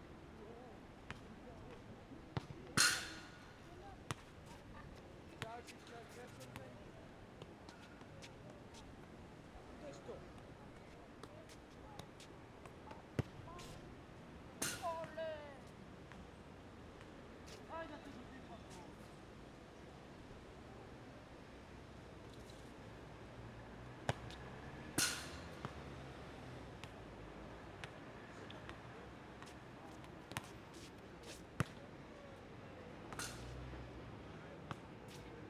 {"title": "Saint-Gilles, Belgium - Game of football", "date": "2013-06-20 15:57:00", "description": "The sound of the boys playing football in the park. Recorded with Audio Technica BP4029 and FOSTEX FR-2LE.", "latitude": "50.83", "longitude": "4.35", "altitude": "47", "timezone": "Europe/Brussels"}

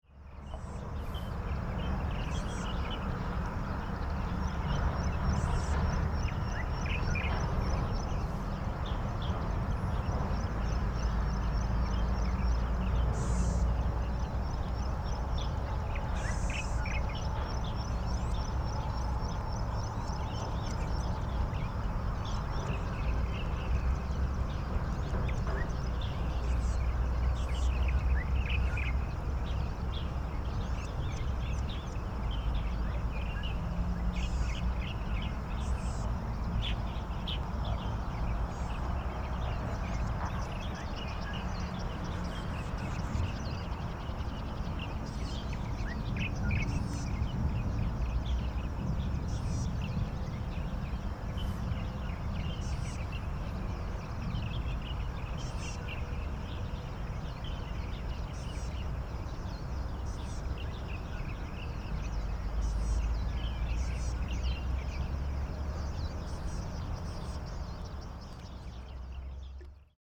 {"title": "Bali Dist., New Taipei City - Bird calls", "date": "2012-04-09 06:44:00", "description": "Bird calls\nSony PCM D50+ Soundman OKM II", "latitude": "25.16", "longitude": "121.40", "altitude": "4", "timezone": "Asia/Taipei"}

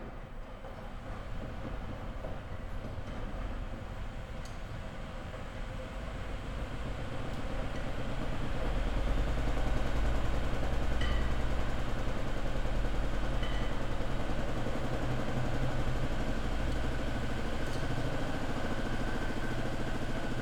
{"title": "berlin, ohlauer str., waschsalon - laundry ambience", "date": "2020-03-29 16:05:00", "description": "waiting for washing machine to finish, ideling... not a busy place today\n(Sony PCM D50, Primo EM172)", "latitude": "52.49", "longitude": "13.43", "altitude": "40", "timezone": "Europe/Berlin"}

